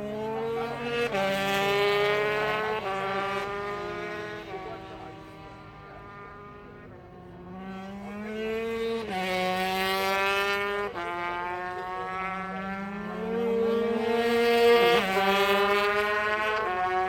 briish superbikes 2006 ... 125 qualifying ... one point stereo mic to mini disk ... date correct ... time not ...